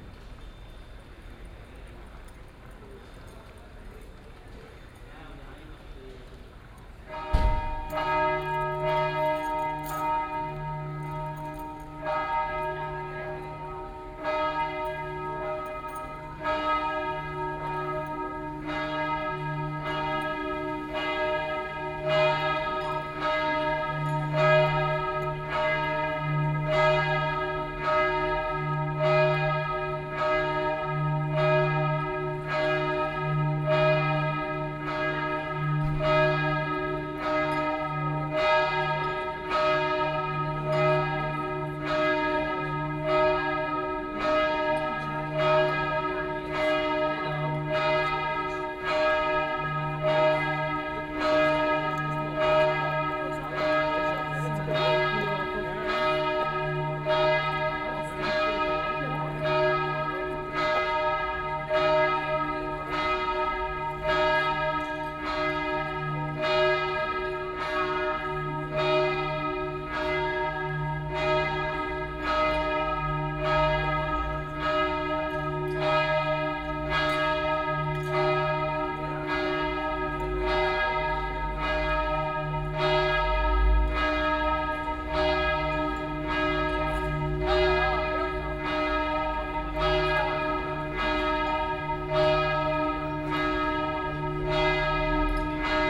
Aarau, Kirchplatz, Fountain, Bells, Schweiz - walk around the well
Walk around the well, the bells are tolling, noon at Kirchplatz, people are starting to have lunch.
30 June 2016, 11:54